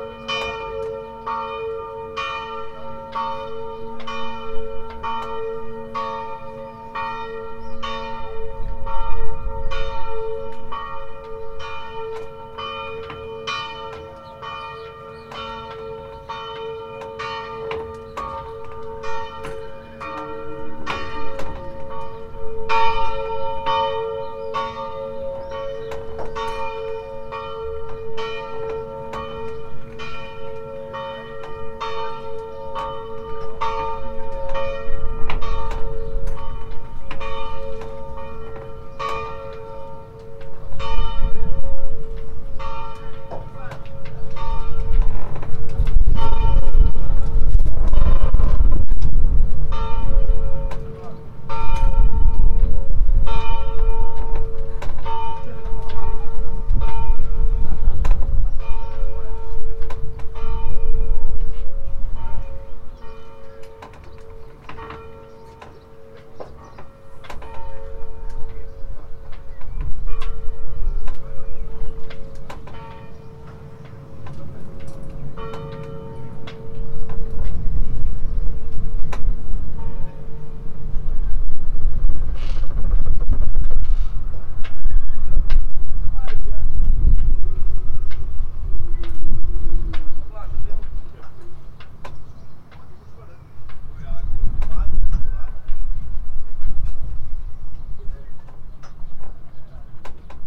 Church Bells at Zlarin, Croatia - (788 UNI) Church Bells at Sunday
Sunday early morning (6:30 am) bells recorded from a boat, thus the crackling sound, wind, and voices.
Recorded with UNI mics of Tascam DR 100 Mk3.